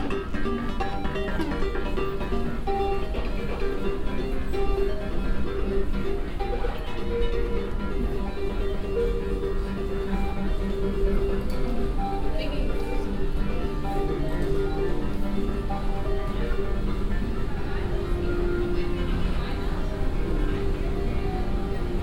In einem Warenhaus für Kleidung. Der Klang der Rolltreppe beim Betreten der Abteilung. Herumgehen begleitet von Warenhaus Musik.
Inside a cloth store going into the department using the moving staircase, walking around accompanied by store music.
Projekt - Stadtklang//: Hörorte - topographic field recordings and social ambiences